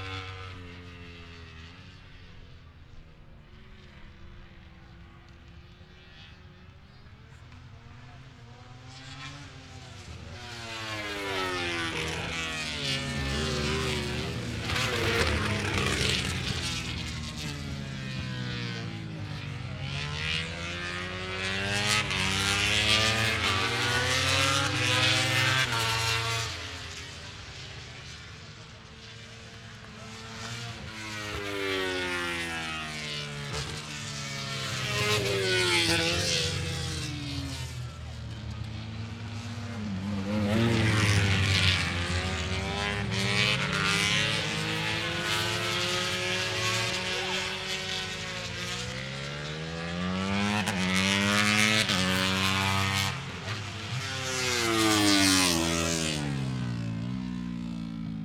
Donington Park Circuit, Derby, United Kingdom - British Motorcycle Grand Prix 2005 ... moto grandprix ...
British Motorcycle Grand Prix 200 ... free practice one ... part two ... one point stereo mic to minidisk ... the era of the 990cc bikes ...